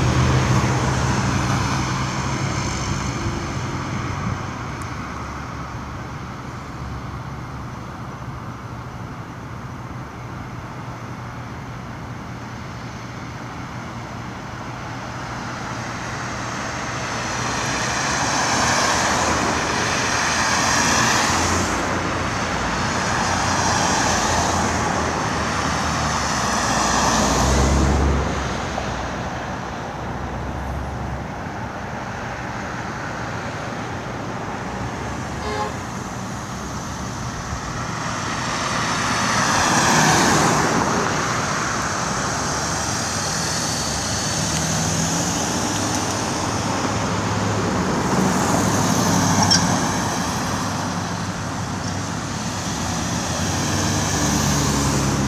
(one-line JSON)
{"title": "University, Windsor, ON, Canada - Street sounds", "date": "2015-12-06 21:43:00", "description": "Street sounds off KCF", "latitude": "42.31", "longitude": "-83.06", "altitude": "185", "timezone": "America/Toronto"}